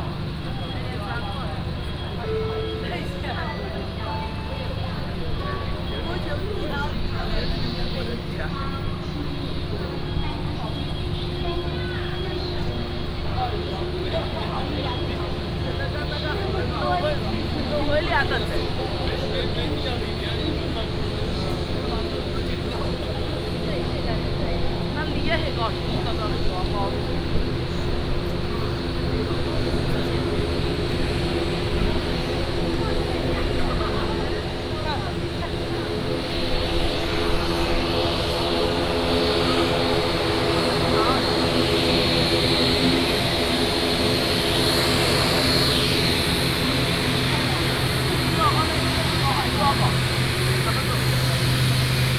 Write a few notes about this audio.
From the airport departure lounge, Towards the airport and into the cabin